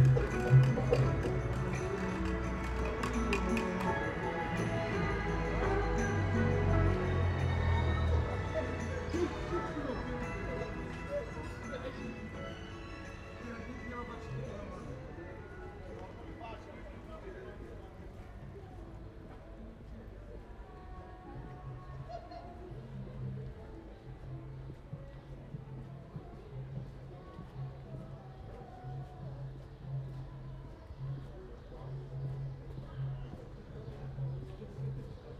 Rue des Faures, Bordeaux, France - Saturday night under covid-19
A walk in Bordeaux a saturday night.
8:00 p.m. applause. Almost empty streets. Only the poorer people are outside. 5 magpies.
Recorded with a pair of LOM Usi pro and Zoom H5.
40 minutes of recording cut and edited.
France métropolitaine, France, 4 April, 20:00